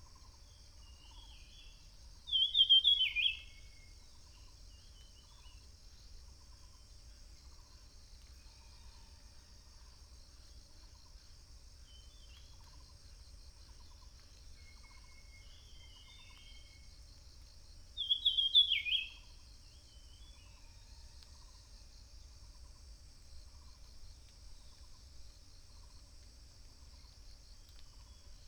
顏氏牧場, 埔里鎮桃米里 - Bird sounds
Bird sounds
Binaural recordings
Sony PCM D100+ Soundman OKM II